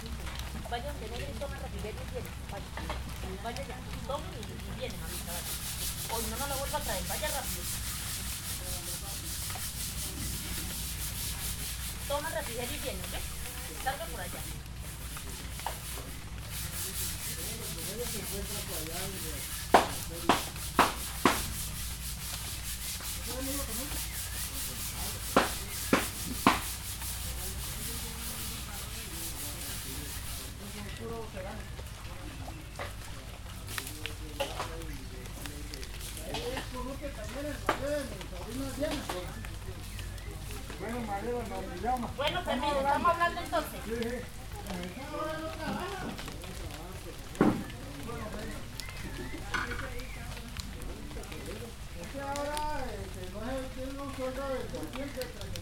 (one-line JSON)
{"title": "Puerto Gaitán, Meta, Colombia - Wacoyo Casa Jairo Yepes", "date": "2014-07-30 10:23:00", "description": "Audio grabado en la casa del capitan Jairo Yepes en el marco del proyecto piloto de investigación sobre sonoridad y manifestaciones musicales de la etnia Sikuani en el resguardo de Wacoyo. Este proyecto se enmarca en el plan departamental de música del Meta.", "latitude": "4.33", "longitude": "-72.01", "altitude": "192", "timezone": "America/Bogota"}